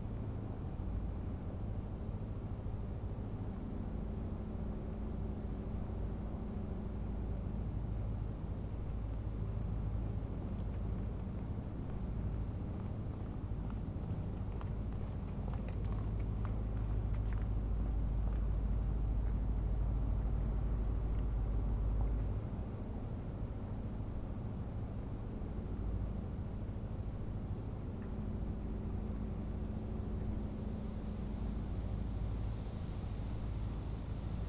Berlin: Vermessungspunkt Friedel- / Pflügerstraße - Klangvermessung Kreuzkölln ::: 08.09.2010 ::: 01:57